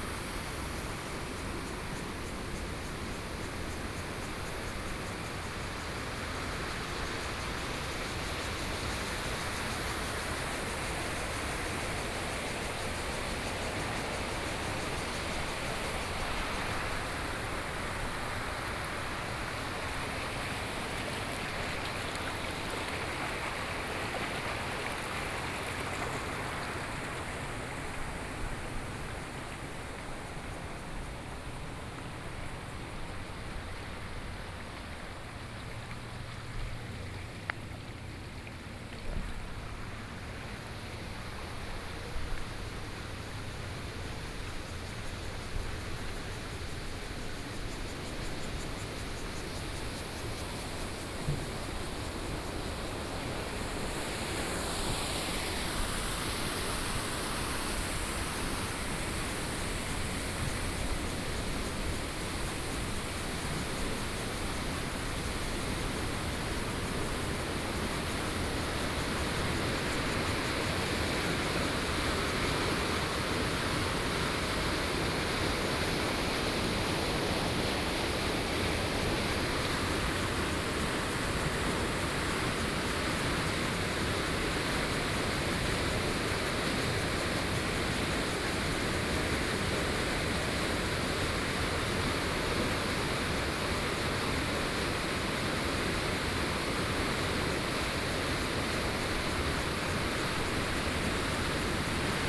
初英親水生態公園, Ji'an Township - Various water sounds
Walking in the park, Various water sounds, Waterwheel, Cicadas sound